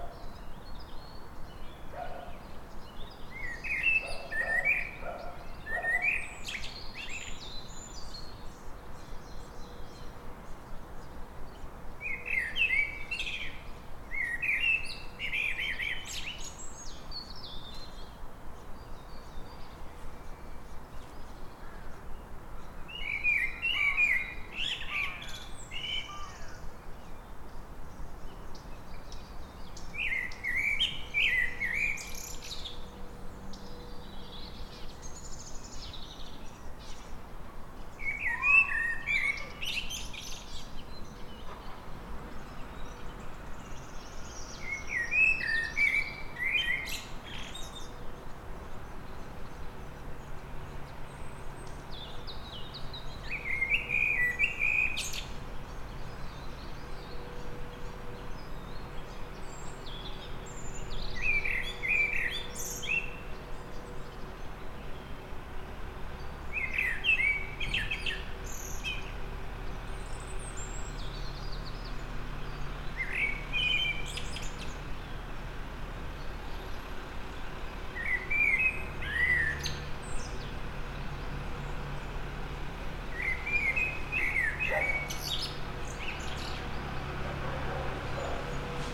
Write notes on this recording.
Persistent birdsong is met with a loud humming of a truck in the background. The truck drives off, and as if taking a birdsong with it, we're left with more quiet soundscape. Recorded with ZOOM H5.